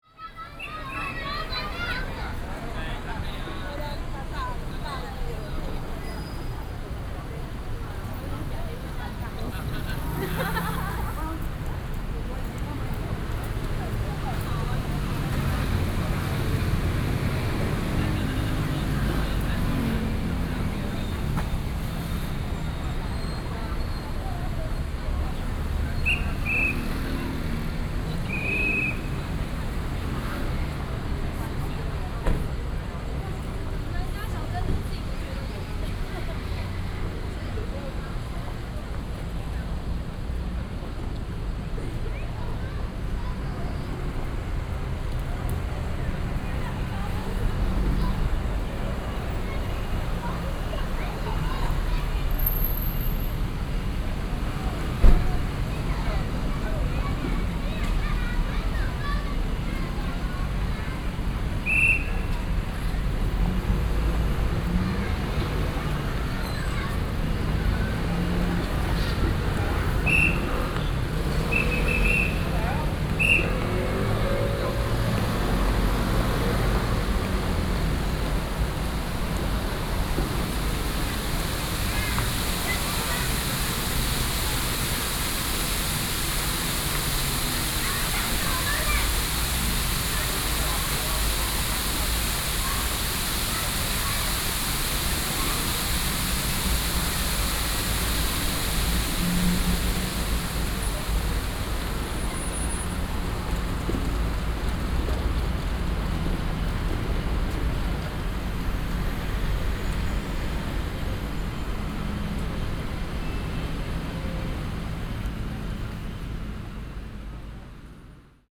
Sec., Dunhua S. Rd., Da’an Dist. - Outside shopping mall
Outside shopping mall, Taxi call area
Sony PCM D50+ Soundman OKM II